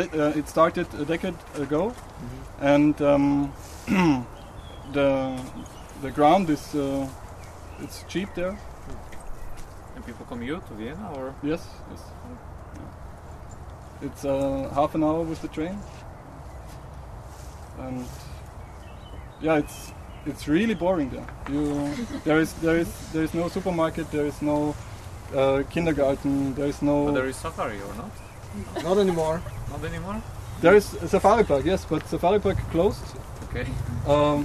wien-stadlau, mühlgrund
landscape architect david fadovic talking about his memories of growing up in-between bratislava and vienna